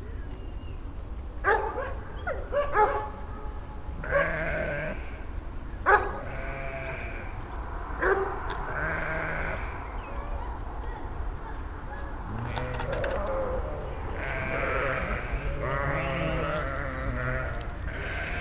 V Drago, Maribor, Slovenia - barking versus bleating
grazing, barking, bleating, coughing, croaking